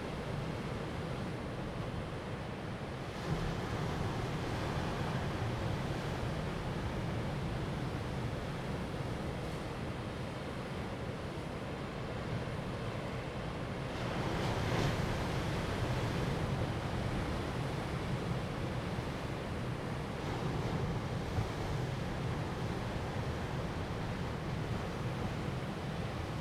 東清村, Koto island - On the coast
On the coast, Sound of the waves
Zoom H2n MS +XY
Taitung County, Taiwan, October 2014